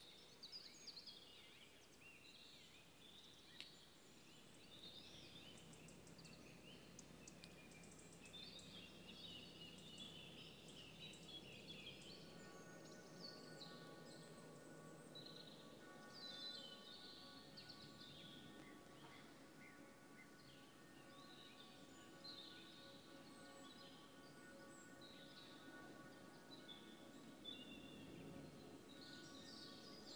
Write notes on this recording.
Recorded before the C19 Lockdown, in B-Format ambisonic on a Twirling720 mic with Android phone, interior of Rochester Cathedral during the exhibition Museum of the Moon